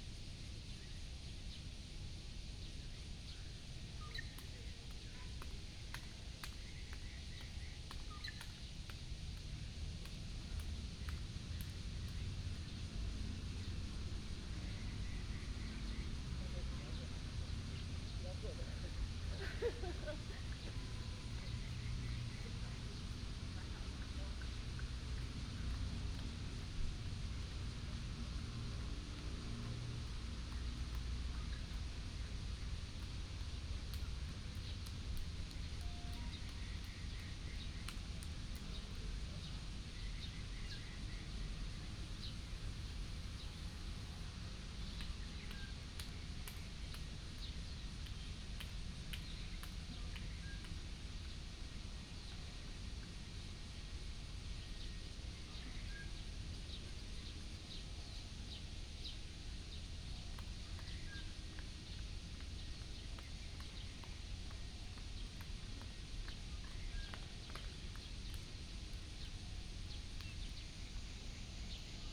龍崗綜合訓練場, Zhongli Dist., Taoyuan City - Disabled military training ground
Disabled military training ground, Birds sound, traffic sound
Taoyuan City, Taiwan, 26 July 2017, ~06:00